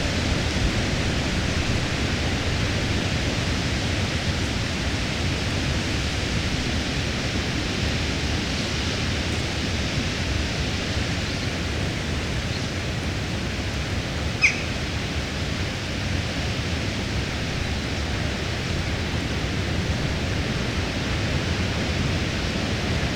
Pamphill, Dorset, UK - Blustery, treetop winds and crows
Recorded in a sheltered spot amongst the trees on a very windy day. Equipment used; Fostex FR-2LE Field Memory Recorder using a Audio Technica AT815ST and Rycote Softie